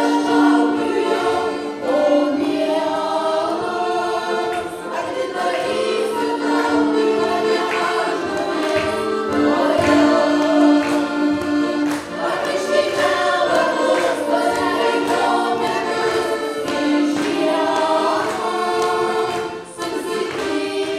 Lithuania, Kuktiskes, at country band fest